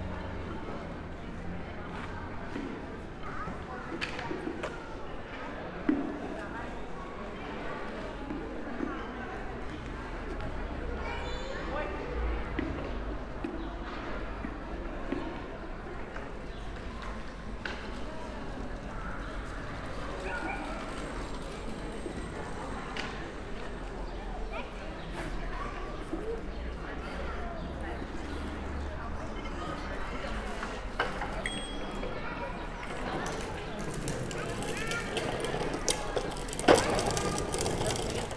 Sanderstraße, Berlin, Deutschland - car-free Sunday afternoon, short walk
walking and listening to street sounds, Sunday afternoon early Summer, all cars have temporarily been removed from this section of the road, in order to create a big playground for kids of all ages, no traffic, no traffic noise, for an afternoon, this street has become a sonic utopia.
(Sony PCM D50, Primo EM172)
6 June 2021